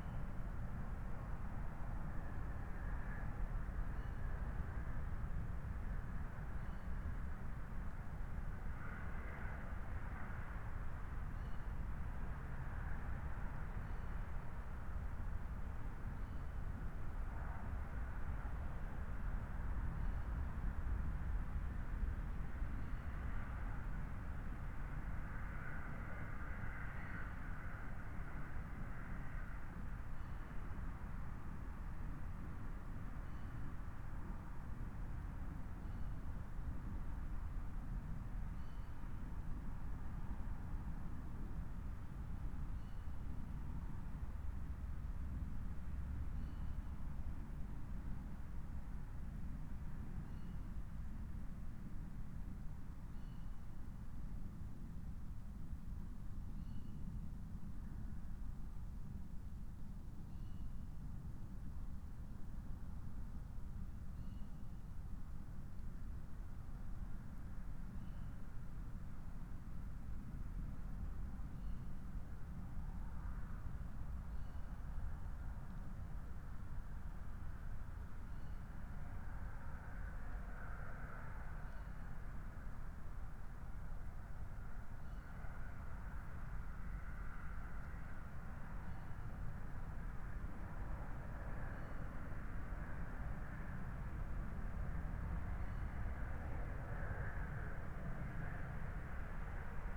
Berlin, Buch, Wiltbergstr. - Remote audio stream from woodland beside the silent River Panke
Remote streaming in the woodland beside the silent River Panke, which is canalised here and fairly narrow. Most of the sound is autobahn traffic. Trains pass regularly. These are constant day and night. In daylight there should also be song birds, great tits, blackbirds, plus nuthatch and great spotted woodpecker.